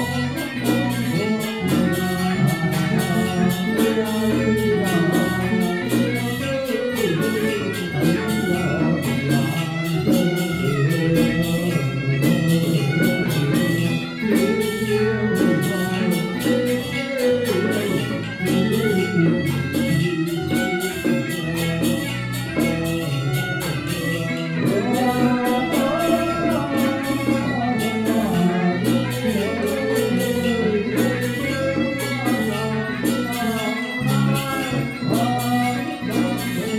Qingshui District, Taichung - funeral ceremony
Traditional funeral ceremony in Taiwan, Sony PCM d50 + Soundman OKM II
台中市西區, 台中市, 中華民國, 12 May 2013, ~11:00